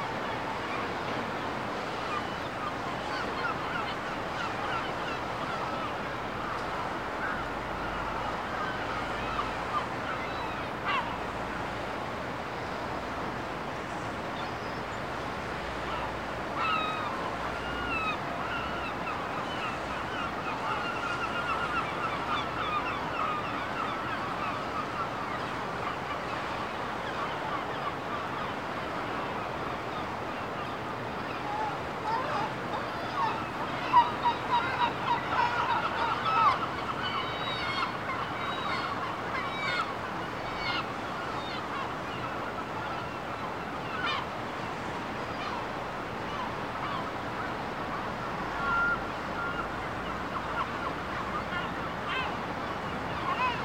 R. do Ouro, Porto, Portugal - Early morning in the Douro
This recording was captures in the early morning (6am) by the side of the Douro river, between the cities of Porto and Vila Nova de Gaia.